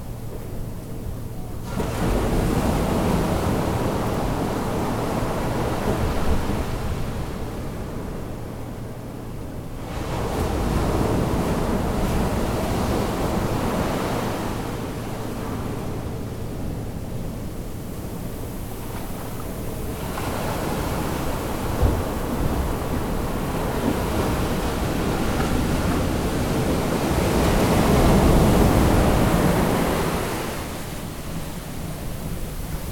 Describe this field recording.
waves are common in sound and nature. theoretically we could interpret the fft of the waterfront similarly to the wavefield synthesis.